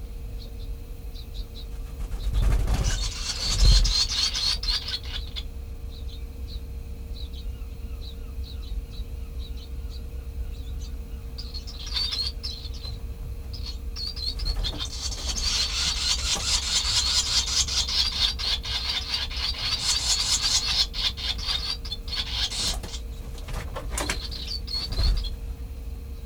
Gedgrave Rd, Woodbridge, UK - barn swallow nest ...
barn swallow nest ... open mic ... recorded in the vestibule of the volunteers hut called Tammy Noddy ... something to do with a Scottish moth ..? nest was over the water butt ... cassette to open reel to sdhc card ... bird calls from ... redshank ... linnet ... curlew ... common tern ... sandwich tern ... any amount of background noise ...
27 August 2000, 12:30